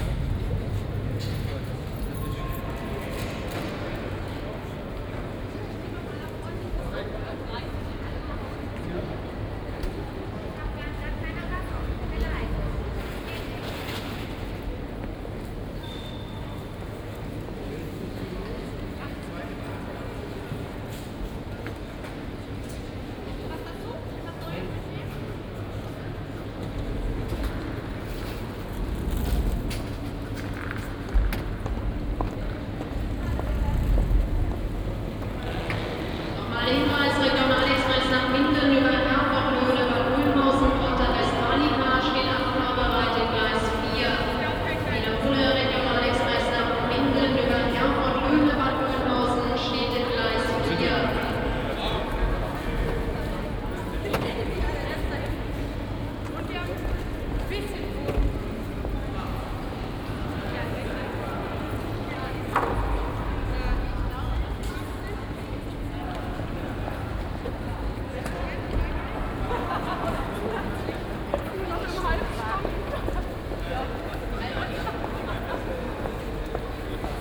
Bielefeld, main station hall anbience. my train from Cologne to Berlin was cancelled due to a failure, so i took the chance to have a short break at this city, where i've never been before, but have passed 1000 times.
(tech note: Olympus LS5, OKM2+A3, binaural)
Bielefeld, Germany, 20 April 2012, 18:20